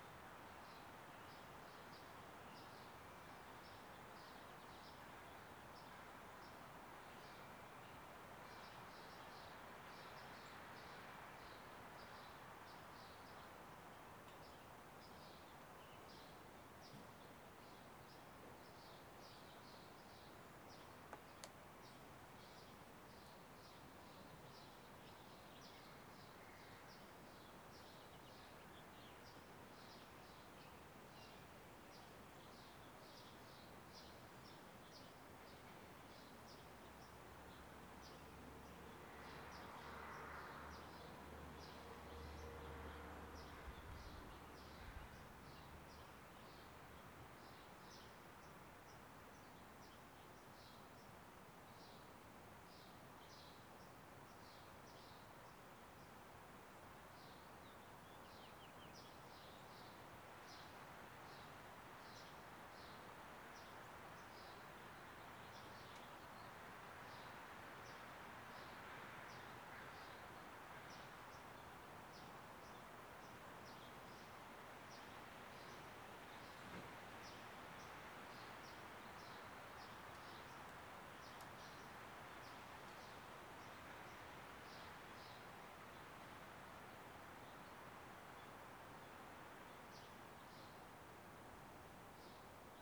Sherwood Forest - Wind in the Trees
On a cloudless clear blue day, wind high in the trees creates a wash of white noise. It's a precursor of change -- by the time the recording is finished the sky is clouded over and threatening to rain.
Major elements:
* Nothing happens. And yet...
Washington, United States of America, June 2002